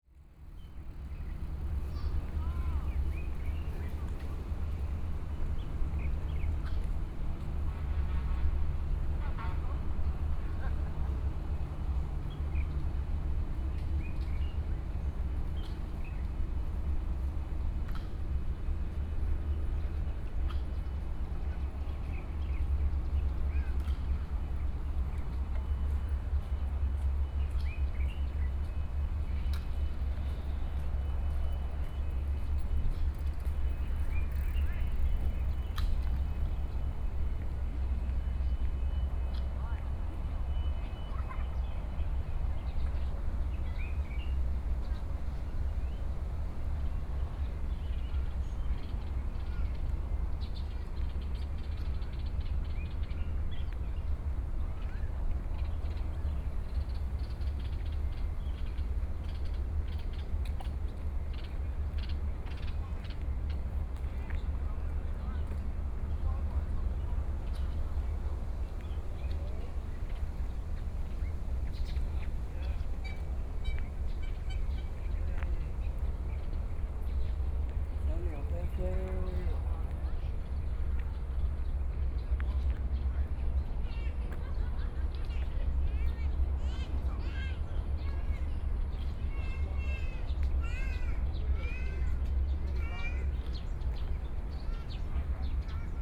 Weiwuying Metropolitan Park, Kaohsiung City - in the Park
Sparrows, Sitting in the Park, Birds singing, Traffic Sound